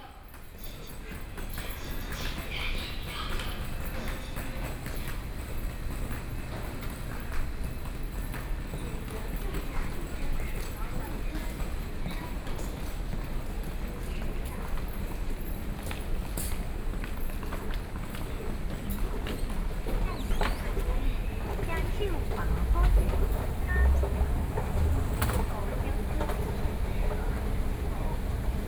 Songshan Airport Station, Taipei city - MRT stations